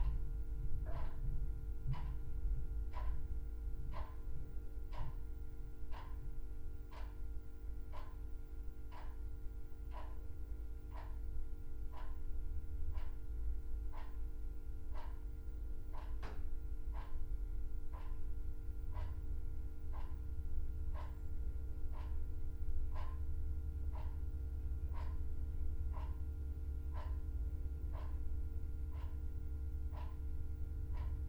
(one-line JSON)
{"title": "Huntley House, Reading, UK - Berkshire Pilates Basement", "date": "2018-01-17 20:15:00", "description": "A short 10 minute meditation in the basement studio of Berkshire Pilates. The fading sound of the meditation bell reveals traffic, notably the low rumble of engines and boom of car stereos. The electric heater buzzes and clicking as it warms-up and together with the clock adds a sense of constancy to the sound of the space. (Spaced pair of MKH 8020s + SD MixPre6)", "latitude": "51.45", "longitude": "-0.97", "altitude": "47", "timezone": "Europe/London"}